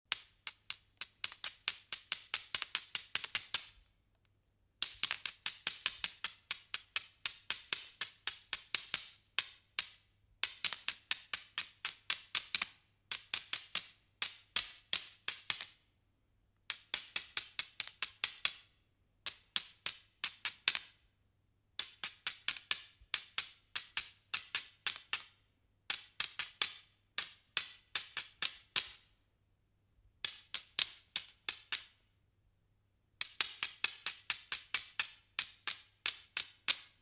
erkrath, neandertal, altes museum, steinzeitwerkstatt - steinzeitwerkstatt - markasit und feuerstein
klänge in der steinzeitwerkstatt des museums neandertal - hier: bearbeitung von markasit gestein mit feuerstein
soundmap nrw: social ambiences/ listen to the people - in & outdoor nearfield recordings, listen to the people